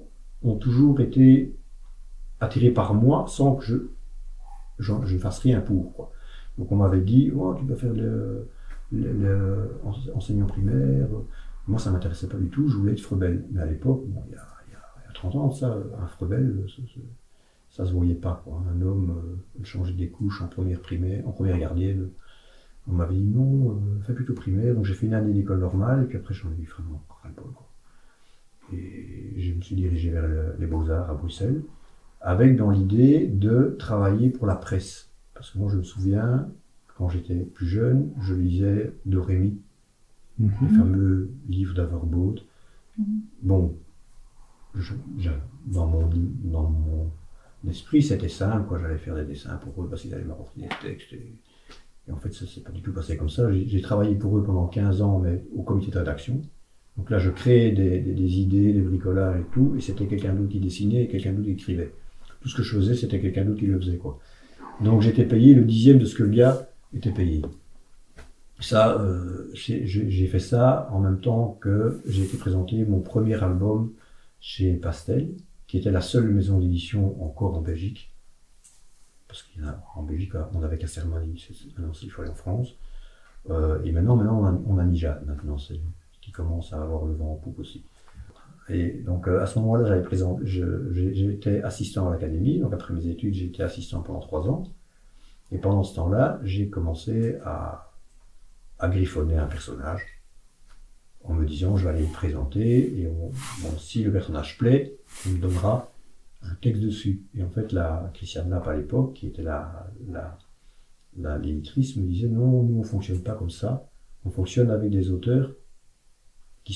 Court-St.-Étienne, Belgique - Papaloup
Interview of "Papaloup", a drawer for very young children (1-3 years). He explains why he began to draw and why he went to be baby keeper.